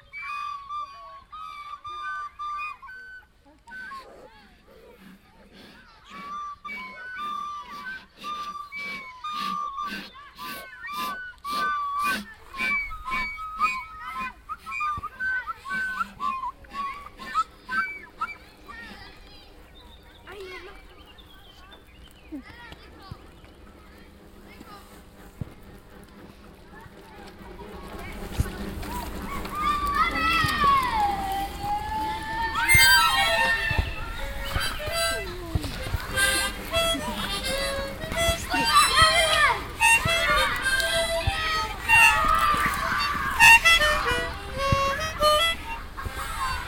cologne, chorweiler, elementary school, kids air instrument orchestra
kids rehearsing open air with different air instruments
soundmap nrw: social ambiences/ listen to the people in & outdoor topographic field recordings
22 June 2007, ~16:00